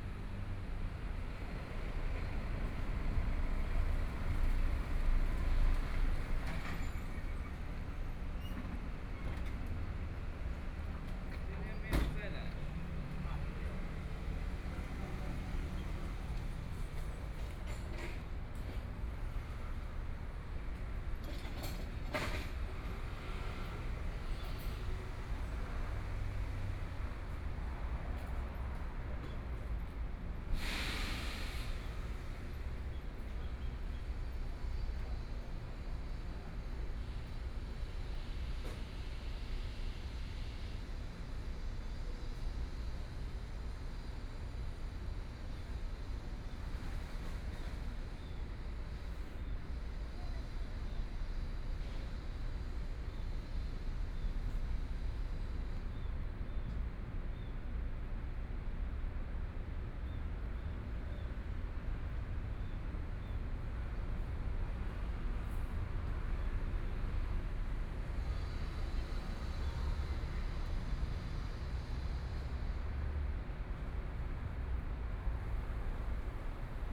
Yingming St., Miaoli City - Arrival and cargo
The sound of traffic, Arrival and cargo trucks, Zoom H4n+ Soundman OKM II
Miaoli County, Taiwan, 8 October, 09:32